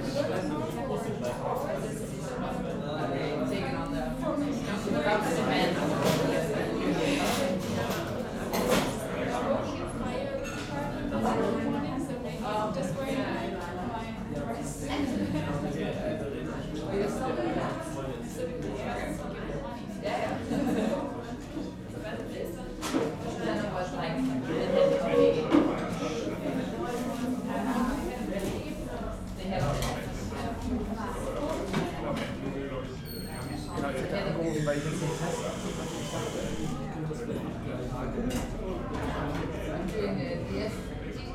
cologne, aachenerstrasse, cafe schmitz - soup and cheese cake
dinner time at metzgerei schmitz, goulash soup and cheese cake
2 March, 18:00